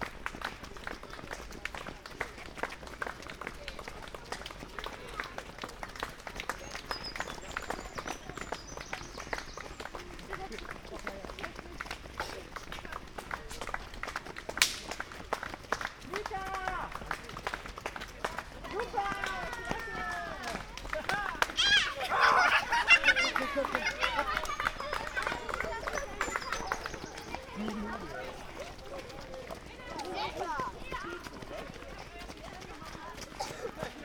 Eversten Holz, Oldenburg - Brunnenlauf, kids marathon
a flock of kids running-by, people clapping, forest ambience
(Sony PCM D50, Primo EM172)